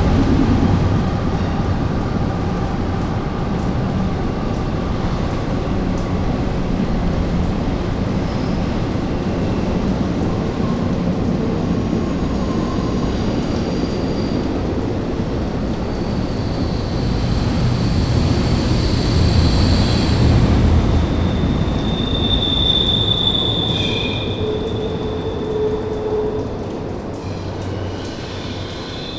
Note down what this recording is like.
A storm drain in the neighborhood dripping after a rainstorm. A train comes by, cars pass the bend in the road where the drain is located, and a repair person for the apartment complex passes the recorder multiple times. Other people in the neighborhood also passed behind the recorder, which resulted in footsteps on the recording. Recorded with a Tascam Dr-100mkiii and a wind muff.